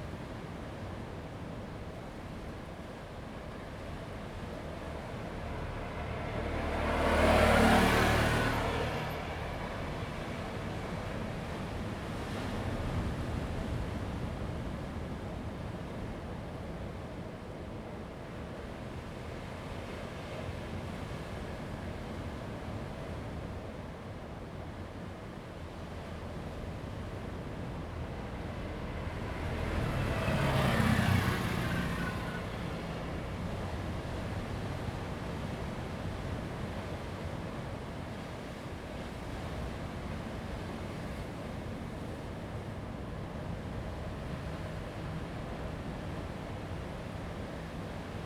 公舘村, Lüdao Township - Inside a small cave
Inside a small cave, Sound of the waves
Zoom H2n MS +XY